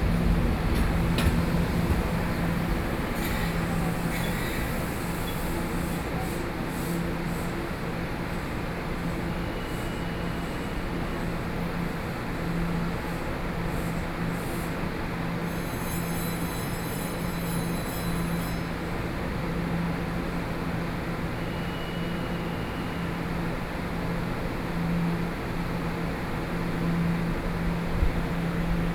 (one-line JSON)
{"title": "Fugang Station, Taoyuan County - platform", "date": "2013-08-14 14:33:00", "description": "waiting in the platform, Sony PCM D50+ Soundman OKM II", "latitude": "24.93", "longitude": "121.08", "altitude": "118", "timezone": "Asia/Taipei"}